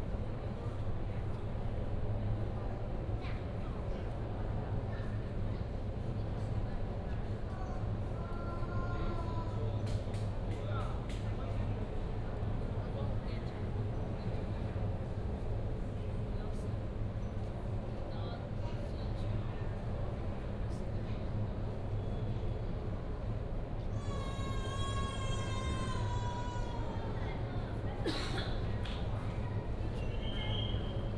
in the station platform, Station information broadcast, The train passed
Taoyuan City, Taiwan, 22 December 2017